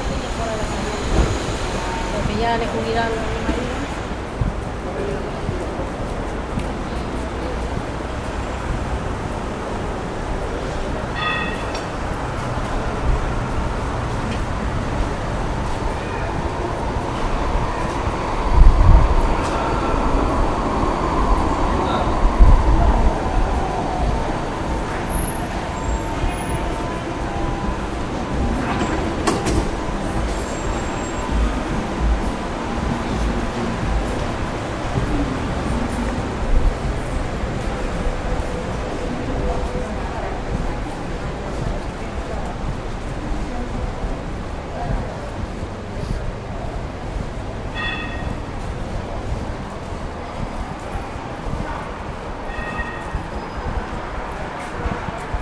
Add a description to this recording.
Its a warm afternoon, I get out of the bus and start walking. its crowded and traffic on the street... many buses returning from schools with children... and a bit annoying light wind. recorded: thursday, 22/10/2009 at 5:45 p.m